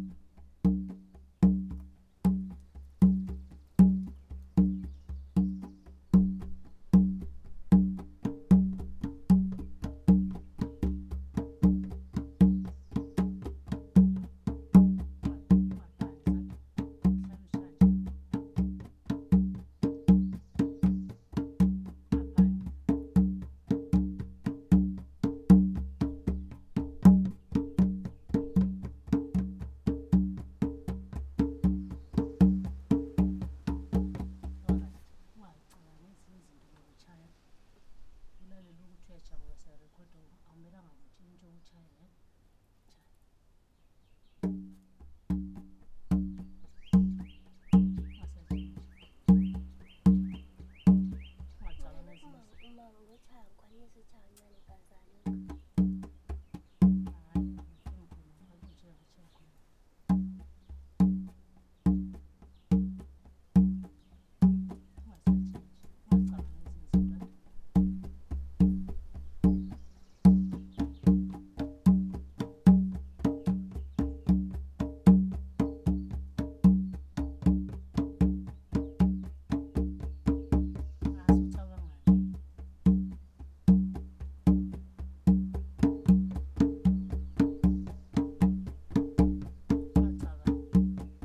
Lupane, Zimbabwe - Ugogos rainmaking song...
we are at CoCont in the bushland not far from the road and to Lupane centre...before we begin with our interview recording, Ugogo and her girl apprentice perform a traditional Ndebele rain-making song...
October 2018